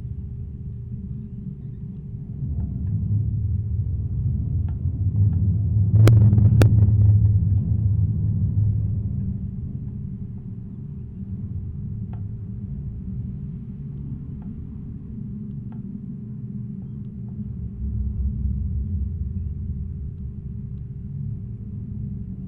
Support Cable for Pylon
Recording of support cable for an electricity pylon during high winds with foliage rubbing against the cable